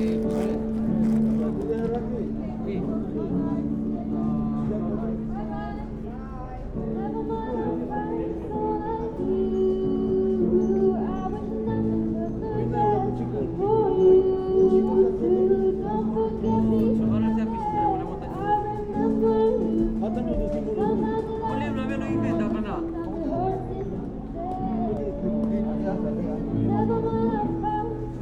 Girl Singer, HIgh Street, Worcester, UK
Street sounds then a girl singer on the other side of the road performs over conversations and other random noise. Another experiment with long recordings.
MixPre 6 II with 2 Sennheiser MKH 8020s.
Worcestershire, England, United Kingdom, 26 August 2022, ~12:00